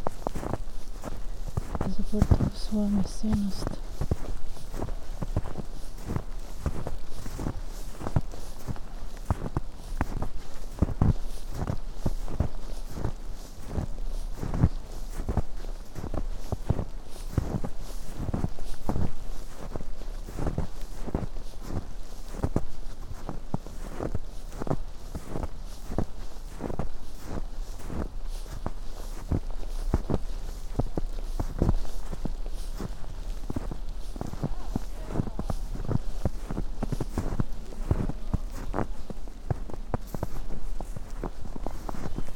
sonopoetic path, Maribor, Slovenia - walking poem
deep snow, walk, steps, sounds of winter clothes, spoken words